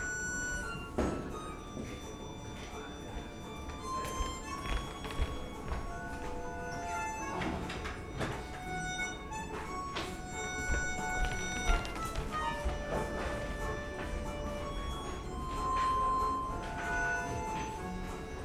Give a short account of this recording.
almost empty bar, bar woman busy with chairs and tables, the city, the country & me: april 18, 2011